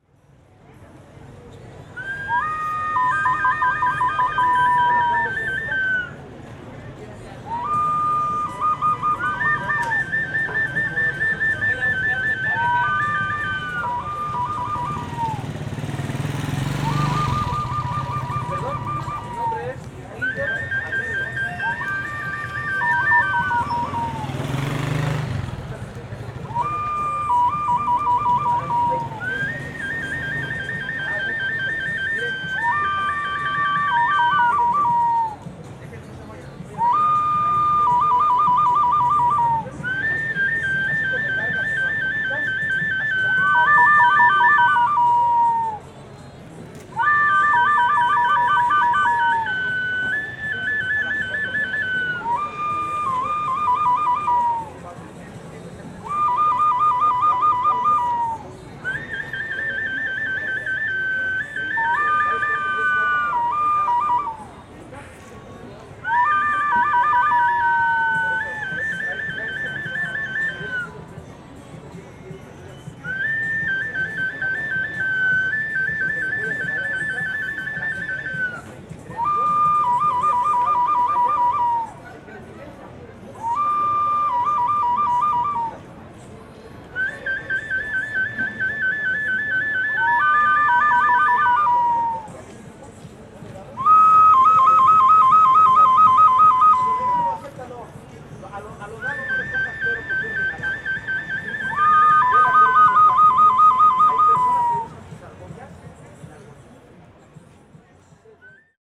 C., Centro, Maxcanú, Yuc., Mexique - Maxcanú - Silvatos
Maxcanú - Mexique
les vendeurs de "Silvatos" - sifflets ou appeaux
Maxcanú, Yucatán, México, 21 October 2021, 10:45